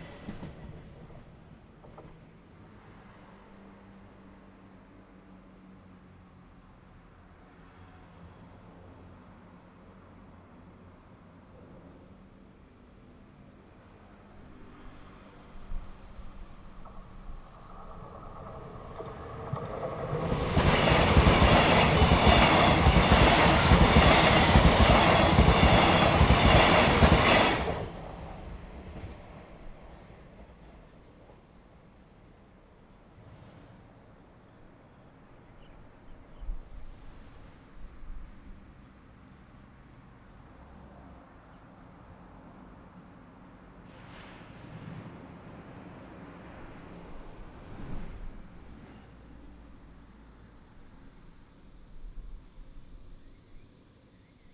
Close to the rails, train runs through, Traffic sound
Zoom H6
Taoyuan City, Taiwan, 12 August 2017